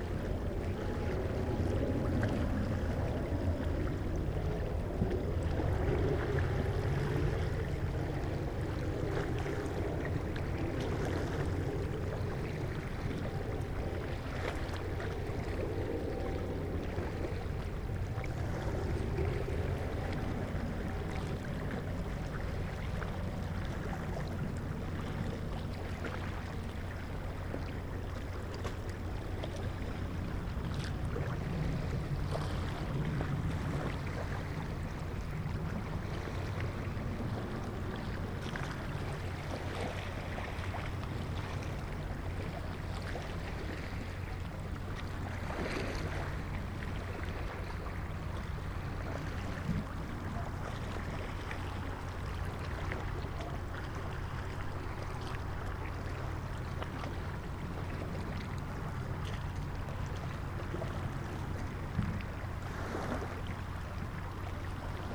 recording with 2 x neumann km184, AB, stereo on 2008.01.12, 01:00 in the morning, low wind, silence
Lapmežciema pagasts, Latvia